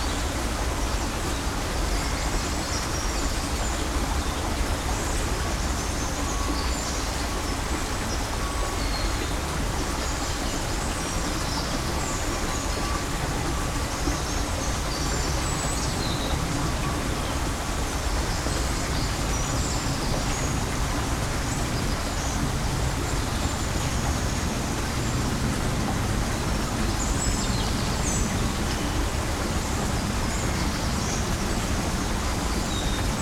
Sirens in the background.
SD-702, Me-64, NOS
Uccle, Belgium, 10 January 2012